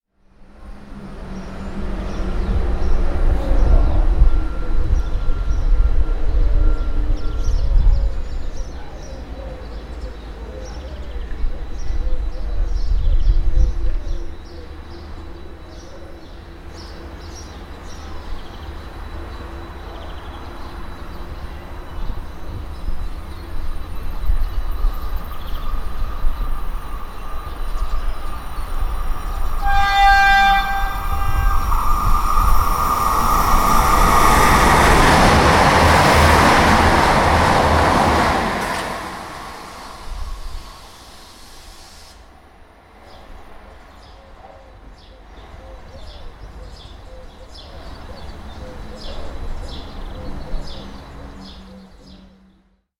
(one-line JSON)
{"title": "Trabia PA, Italia [hatoriyumi] - Treno Minuetto in transito ad alta velocità", "date": "2012-05-04 16:00:00", "description": "Stazione di Trabia. Treno in transito ad alta velocità.", "latitude": "38.00", "longitude": "13.66", "altitude": "19", "timezone": "Europe/Rome"}